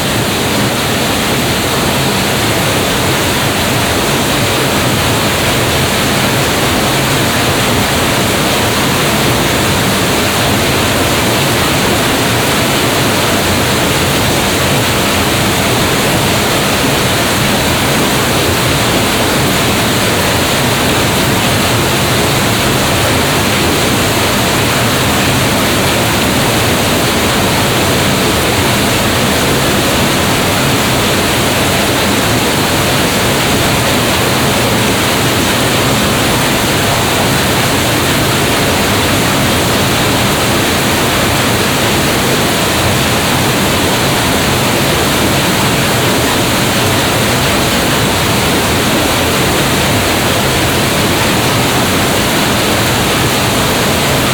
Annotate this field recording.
Another recording of a water wheel. This time the wheel is inside the building. soundmap d - social ambiences, water sounds and topographic feld recordings